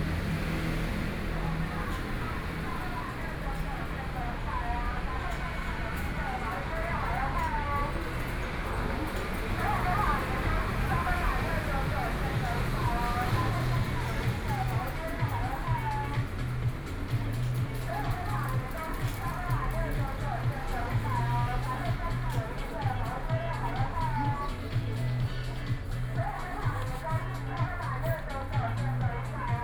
鹽埕區南端里, Kaohsiung City - in Convenience Store
in Convenience Store
Kaohsiung City, Taiwan, 13 May 2014, 20:14